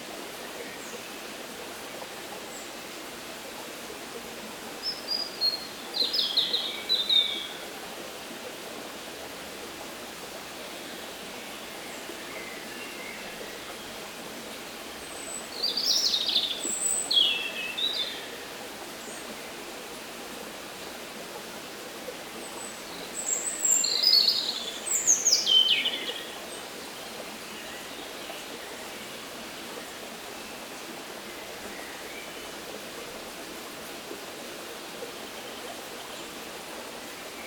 Surjoux, France - Near the river
At the end of this path, there's a big fall called Le Pain de Sucre. In french it means the sugar bread. It's because there's an enormous concretion like a big piece of sugar. Here the sound is the quiet river near the fall, with discreet birds living near the river.
13 June